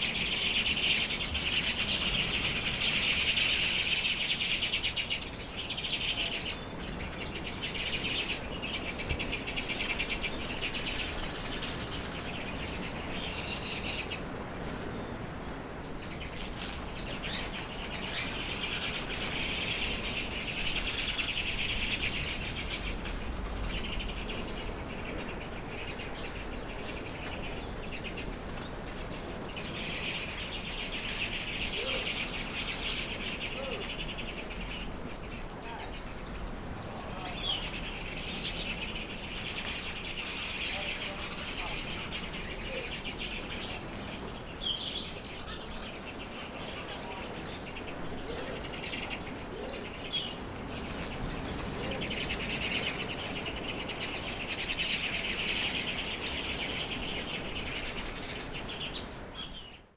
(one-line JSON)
{"title": "stromboli, ginostra - lots of birds in a tree", "date": "2009-10-20 18:30:00", "description": "early evening, lot of birds in a tree", "latitude": "38.79", "longitude": "15.19", "altitude": "46", "timezone": "Europe/Rome"}